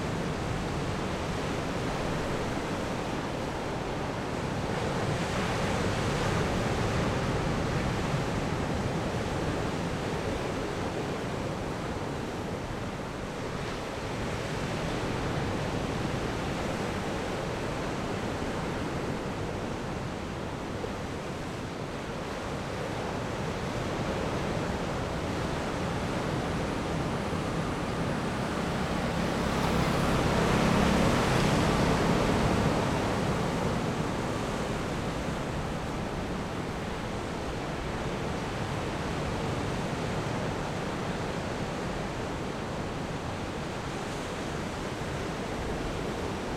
公舘村, Lüdao Township - behind a large rock
In a large rock behind, sound of the waves, Traffic Sound
Zoom H6 +Rode NT4